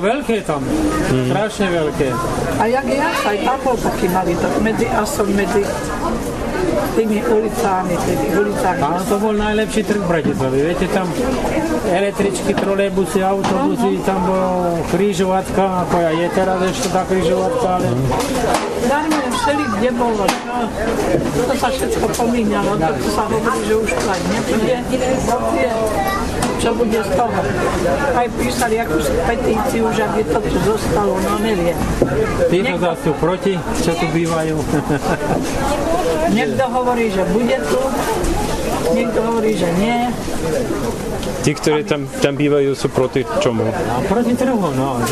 bratislava, market at zilinska street
vendors telling some history about bratislavas biggest marketplaces